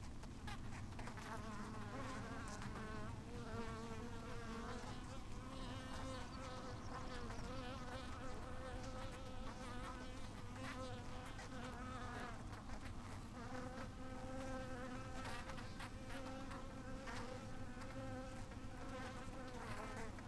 bee swarm ... SASS to Zoom F6 ... the bees have swarmed on the outside of one the hives ...
Yorkshire and the Humber, England, United Kingdom, 12 July 2020, ~06:00